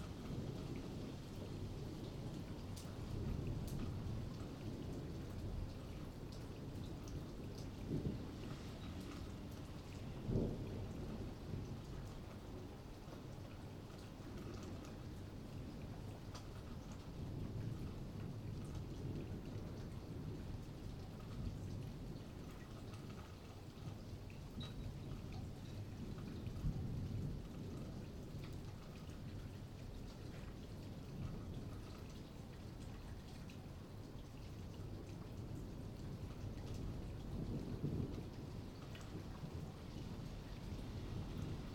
Connolly St, Midleton, Co. Cork, Ireland - Incoming Rain
Sounds of thunder and rain, interspersed with family life.